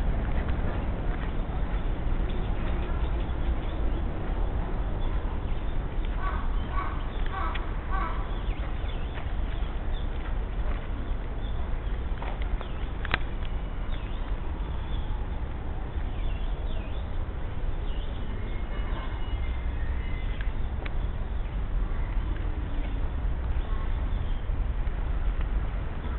{"title": "yoyogipark rec. by I.Hoffmann", "latitude": "35.67", "longitude": "139.70", "altitude": "44", "timezone": "GMT+1"}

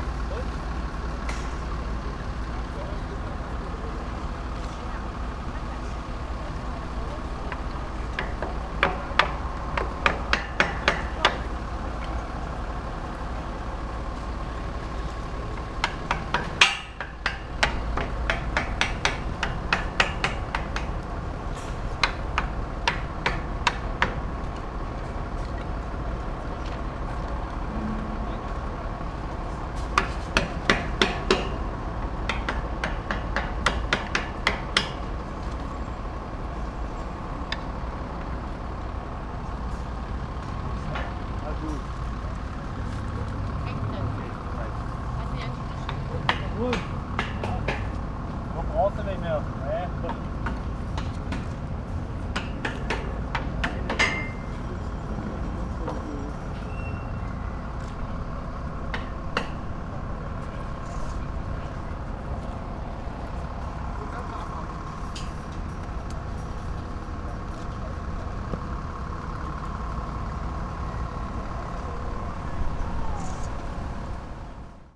{"title": "Berlin, Marx-Engels-Forum - intermediate stop", "date": "2010-09-07 13:30:00", "description": "crane moves Engels statue, workers securing the process, journalists taking photos", "latitude": "52.52", "longitude": "13.40", "altitude": "37", "timezone": "Europe/Berlin"}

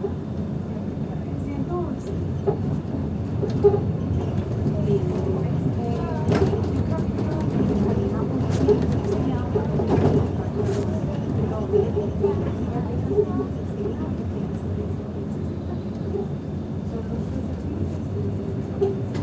{"title": "Av. Ayacucho, Medellín, Antioquia, Colombia - Viaje en travía entre san josé y buenos aires", "date": "2021-11-08 14:13:00", "description": "Sonido ambiente de una viaje en travía entre san josé y buenos aires.\nCoordenadas: 6°14'50.6\"N+75°33'55.7\"W\nSonido tónico: voces hablando, sonido de tranvía (motor).\nSeñales sonoras: niño cantando, celular sonando, puertas abriendo y cerrando, señal de abrir y cerrar puertas.\nGrabado a la altura de 1.60 metros\nTiempo de audio: 7 minutos con 43 segundos.\nGrabado por Stiven López, Isabel Mendoza, Juan José González y Manuela Gallego con micrófono de celular estéreo.", "latitude": "6.25", "longitude": "-75.57", "altitude": "1501", "timezone": "America/Bogota"}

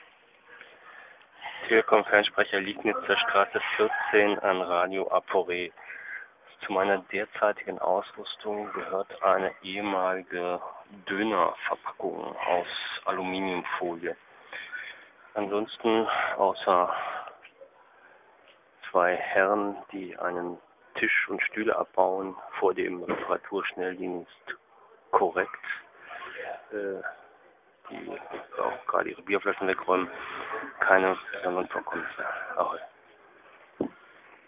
{"title": "Fernsprecher Liegnitzer Straße 14 - radio aporee ::: dönerverpackung aus aluminiumfolie ::: 19.07.2007 20:45:45", "latitude": "52.49", "longitude": "13.44", "altitude": "39", "timezone": "GMT+1"}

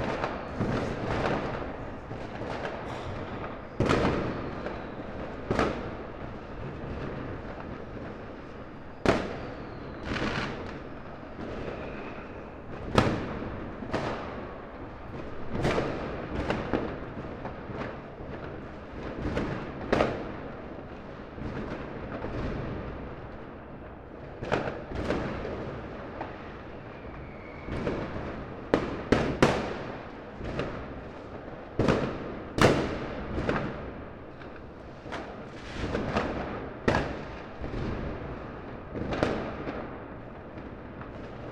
{"title": "Berlin Bürknerstr., backyard window - fireworks", "date": "2014-01-01", "latitude": "52.49", "longitude": "13.42", "altitude": "45", "timezone": "Europe/Berlin"}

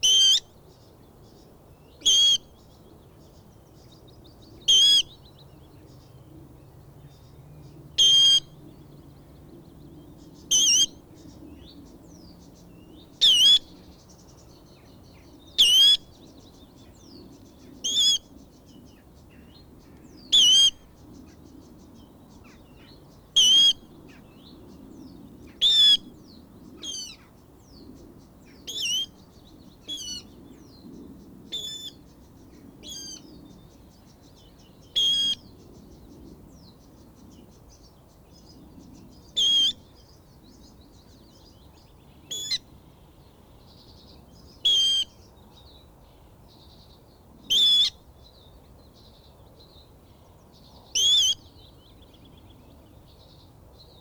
{"title": "High St N, Dunstable, UK - water rails ...", "date": "2000-05-02 06:30:00", "description": "water rails ... parabolic ... recorded where was once a reed bed and water logged scrub ... not getting too anthropormorphic but these two birds where absolutely indignant at my presence ... probably had fledglings near by ... they are highly secretive birds ... bird calls ... song from blue tit ... sedge warbler ... willow warbler ... background noise ...", "latitude": "51.90", "longitude": "-0.54", "altitude": "122", "timezone": "Europe/London"}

